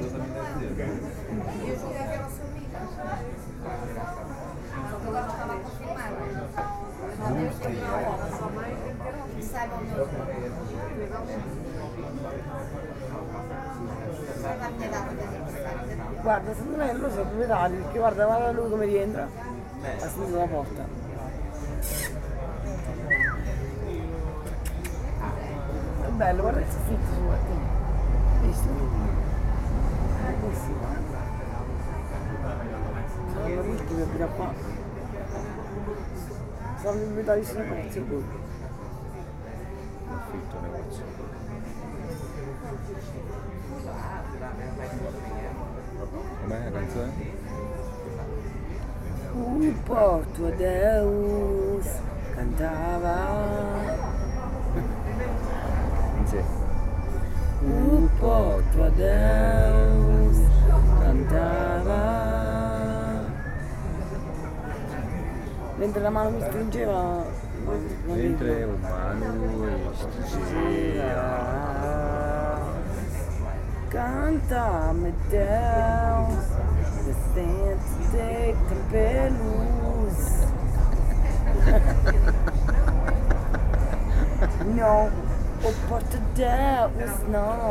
Porto, Largo de Mompilher
last night in porto, joking and singing with the Portuguese language
28 July 2010, 23:19